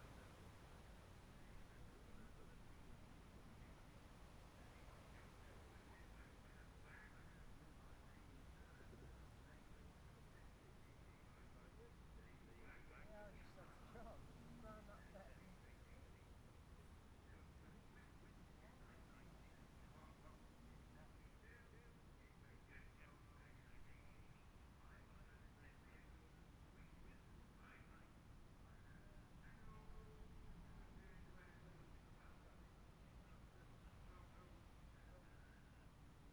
{"title": "Jacksons Ln, Scarborough, UK - Gold Cup 2020 ...", "date": "2020-09-11 12:00:00", "description": "Gold Cup 2020 ... 600 odd and 600 evens pratices ... Memorial Out ... Olympus LS14 integral mics ... real time as such so gaps prior and during the events ...", "latitude": "54.27", "longitude": "-0.41", "altitude": "144", "timezone": "Europe/London"}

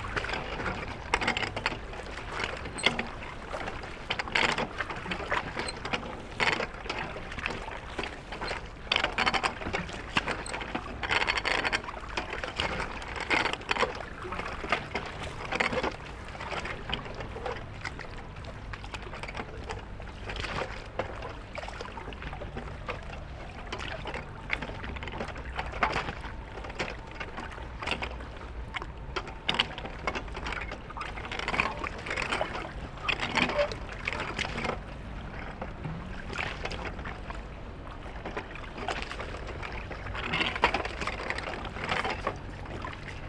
{"title": "Brückenstraße, Berlin, Germany - Damm floating Mechanism - Spree - Damm floating Mechanism - Spree - Berlin", "date": "2018-05-27 12:13:00", "description": "Little damm with a floating metal mechanism on the Spree river bank. Recorded with a AT BP4025 (stereo XY) into a SD mixpre6. You can here boats, water sounds, the railway station and trains on the other bank of the spree, people.", "latitude": "52.51", "longitude": "13.42", "altitude": "32", "timezone": "Europe/Berlin"}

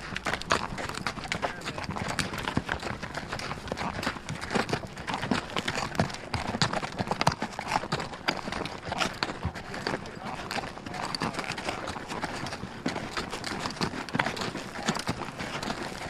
{"title": "Olancha, CA, USA - Mule Hoofs from 100 Mules Walk the Los Angeles Aqueduct", "date": "2013-10-22 09:00:00", "description": "Metabolic Studio Sonic Division Archives:\nRecording of mule hoofs taken during \"100 Mules Walk the Los Angeles Aqueduct. Recorded with two Shure VP64 microphones attaches to either side of saddle on one mule", "latitude": "36.41", "longitude": "-118.04", "altitude": "1152", "timezone": "America/Los_Angeles"}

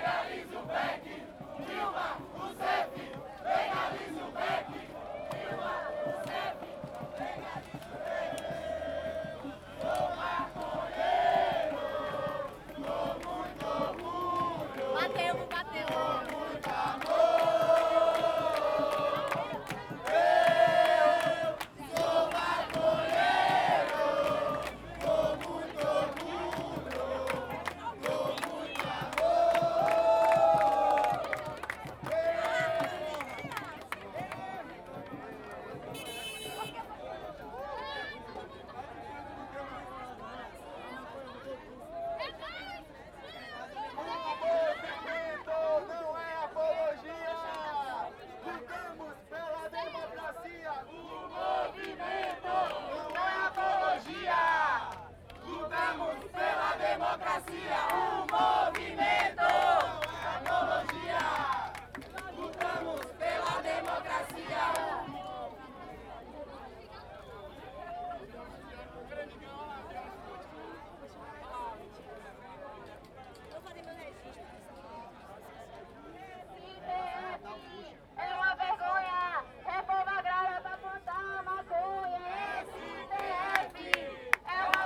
{"title": "Salvador, Bahia, Brazil - Marijuana March", "date": "2014-06-01 16:20:00", "description": "A peaceful legalise marijuana march in Salvador, Brazil", "latitude": "-13.01", "longitude": "-38.52", "altitude": "21", "timezone": "America/Bahia"}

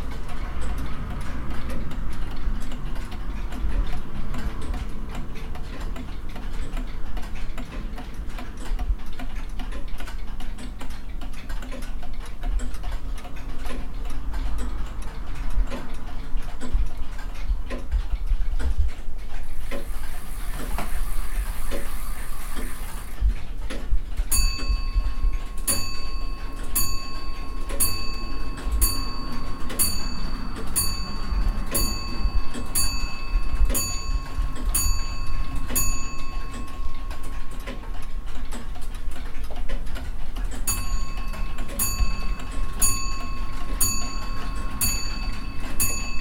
{"title": "Main Street, Carrick on Shannon Co. Leitrim, Ireland - The Sunken Hum Broadcast 345 - Antique Clock Shop - 11 December 2013", "date": "2013-12-11 11:15:00", "description": "The antique clock repair shop in Carrick on Shannon is full of wonderful sounds. I wish I could have spent hours there.", "latitude": "53.95", "longitude": "-8.09", "altitude": "47", "timezone": "Europe/Dublin"}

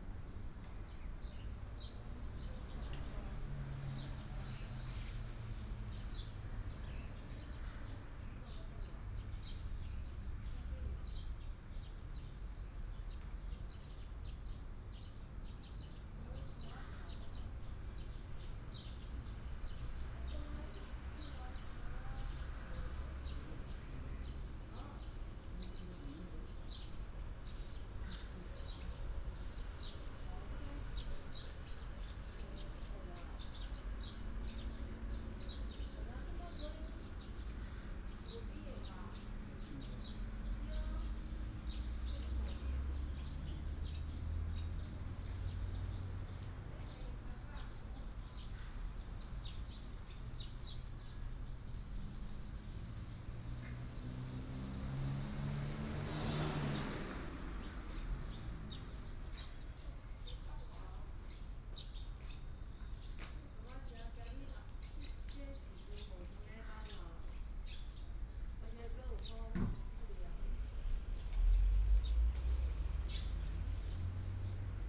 {"title": "古賢里, Hsinchu City - In a small temple", "date": "2017-08-26 10:17:00", "description": "In a small temple, Bird call, Between the plane take off and land", "latitude": "24.83", "longitude": "120.95", "altitude": "8", "timezone": "Asia/Taipei"}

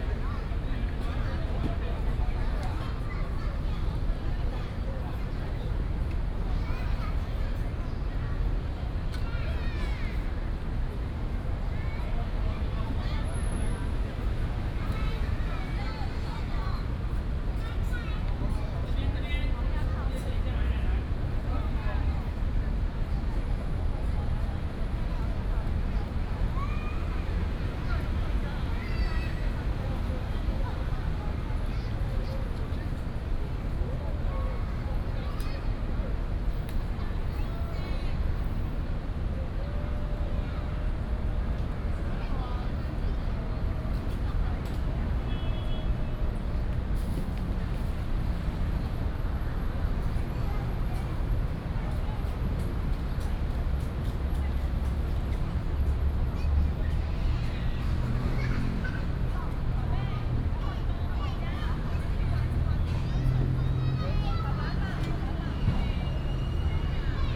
{"title": "瑠公公園, Da'an District - Children's play area", "date": "2015-06-27 18:52:00", "description": "Hot weather, in the Park, Traffic noise, Children's play area", "latitude": "25.04", "longitude": "121.55", "altitude": "16", "timezone": "Asia/Taipei"}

